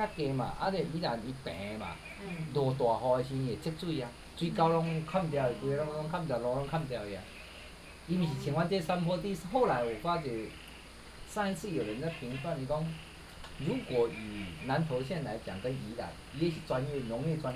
Woody House, 埔里鎮桃米里 - Hostel owners Introduce himself
Hostel owners Introduce himself, Frogs sound